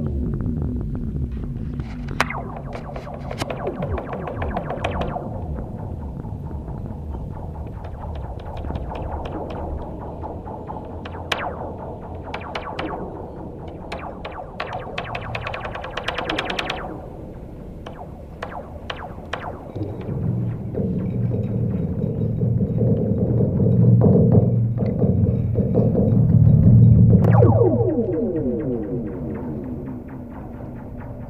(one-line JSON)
{"title": "Green Bridge, Brisbane permanent installation", "latitude": "-27.50", "longitude": "153.02", "altitude": "3", "timezone": "GMT+1"}